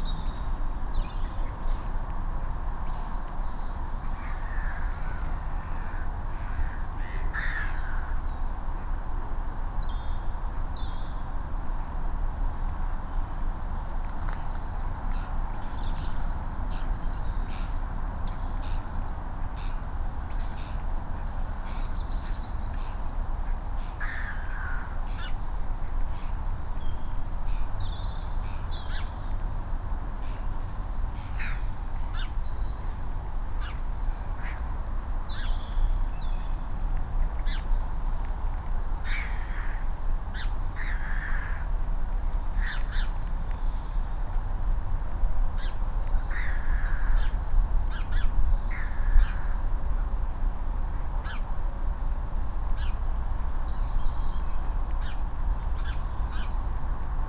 Plymouth, UK - By estuary, Kinterbury Creek